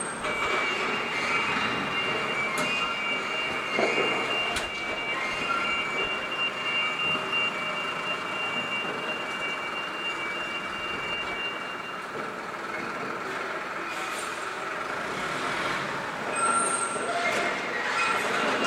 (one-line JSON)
{"title": "Staré Mesto, Slovenská republika - garbage men", "date": "2013-08-12 08:00:00", "description": "typical sound (noise) from 01:10 min", "latitude": "48.16", "longitude": "17.11", "altitude": "156", "timezone": "Europe/Bratislava"}